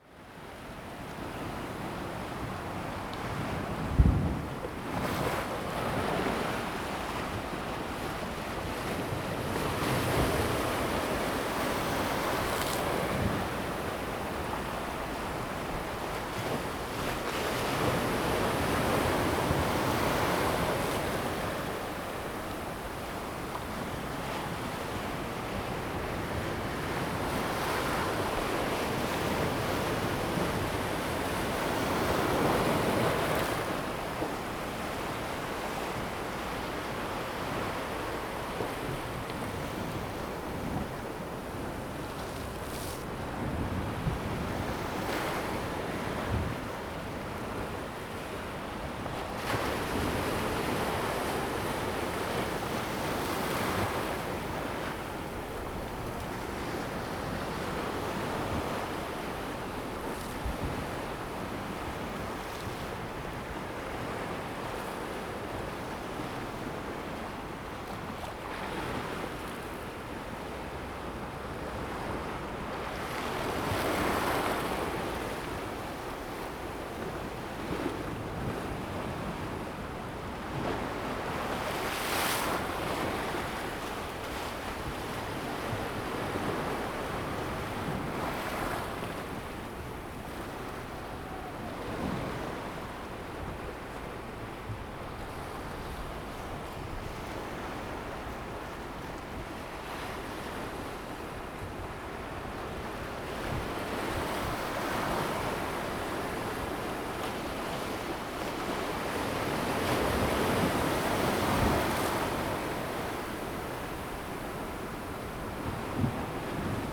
鳳坑漁港, Xinfeng Township - Seawater high tide time
Seawater high tide time, Small pier, The sea is slowly rising tide
Zoom H2n MS+XY